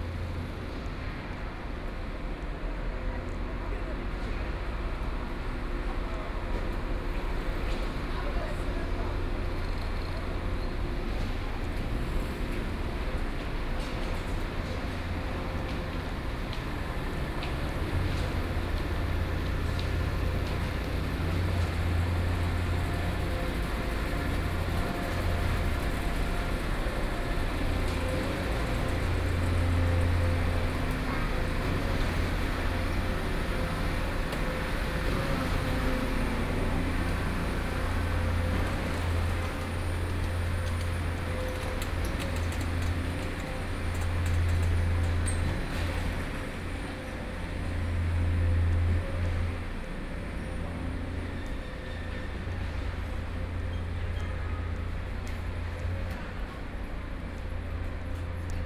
Tianjin Road, Shanghai - Noise on the road
Construction site sounds, Traffic Sound, Binaural recording, Zoom H6+ Soundman OKM II
Zhabei, Shanghai, China, 3 December